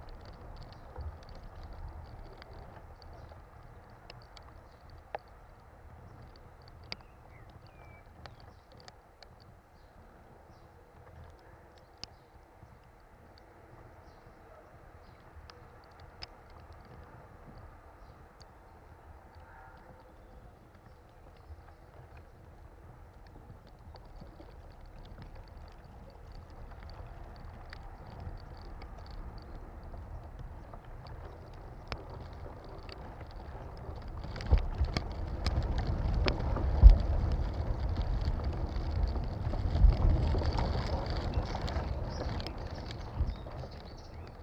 R. dos Malmequeres, Amora, Portugal - Palm tree fibres inn wind plus bird; 2 contact mics
2 contact mics placed lower down the trunk than the previous recording. Contacts mics also pick up sounds from the air, eg the close bird song and distant traffic heard in this recording.